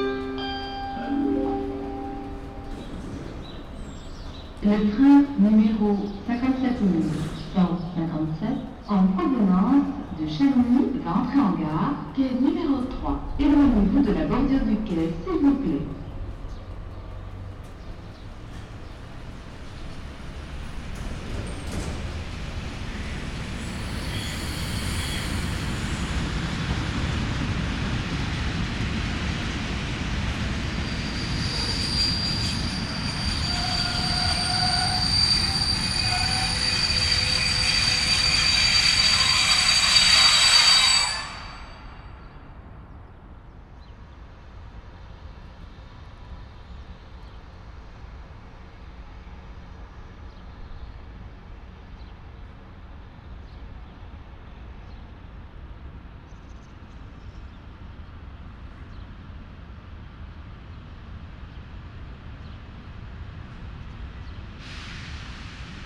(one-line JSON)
{"title": "Chalon-sur-Saône, France - Annonce & trains 1998", "date": "1998-04-08 08:39:00", "description": "Quai de la gare\nDPA 4011 + bonnettes DPA + PSP2 + DAT", "latitude": "46.78", "longitude": "4.84", "altitude": "179", "timezone": "Europe/Paris"}